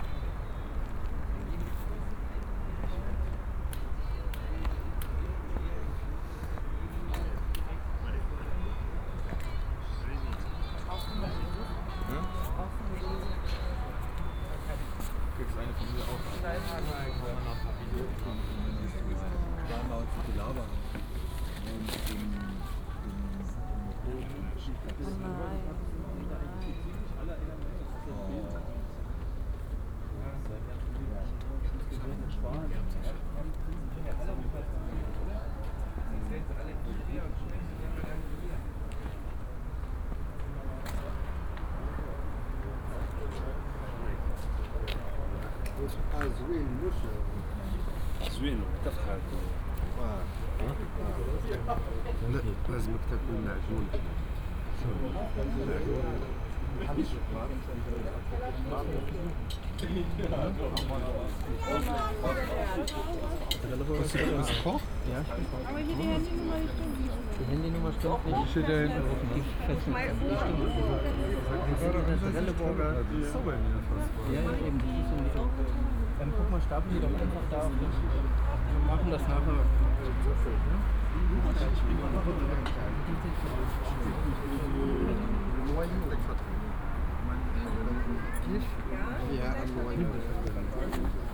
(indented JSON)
{
  "date": "2011-11-07 20:50:00",
  "description": "occupy berlin camp, people talking, campfire, 20-30 people around, improvised kitchen in one of the tents. the night will be cold.",
  "latitude": "52.52",
  "longitude": "13.41",
  "altitude": "45",
  "timezone": "Europe/Berlin"
}